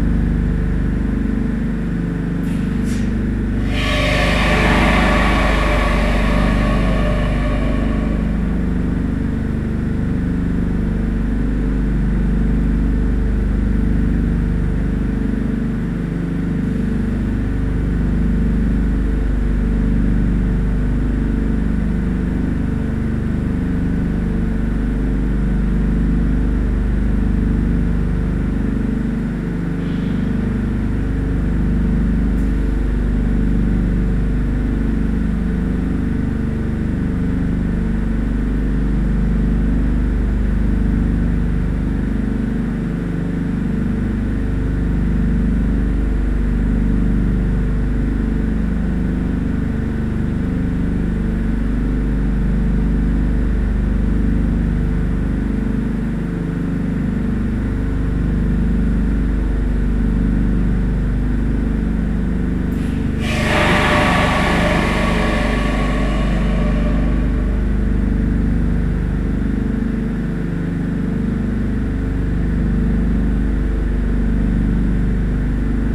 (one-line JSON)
{"title": "lietzow, spitzer ort: kleinkläranlage - the city, the country & me: small sewage treatment plant", "date": "2013-03-05 15:54:00", "description": "pump of a small sewage treatment plant\nthe city, the country & me: march 5, 2013", "latitude": "54.48", "longitude": "13.51", "timezone": "Europe/Berlin"}